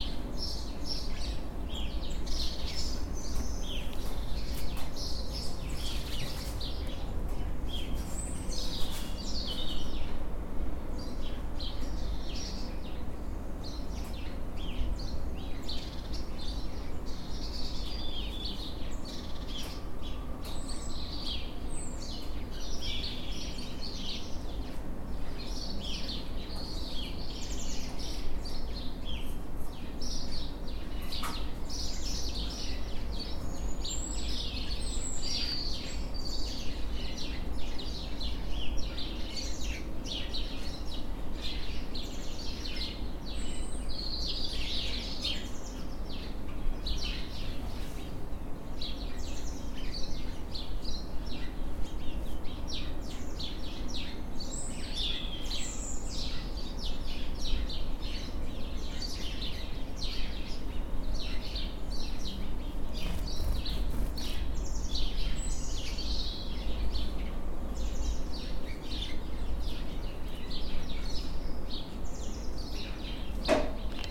Cologne, An der Linde, Deutschland - Sparrows and tits
Sparrows and tits singing in the garden, enjoying a mild and sunny spring day
Cologne, Germany, 4 March 2014, ~11:00